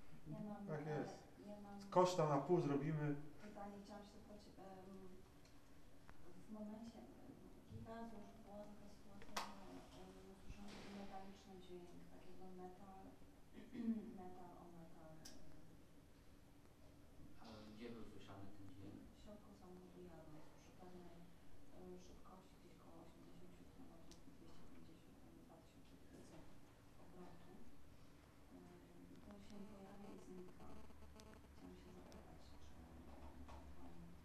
Słubice, Polen - at the garage
waiting to get to the counter at the garage, having to have our car fixed.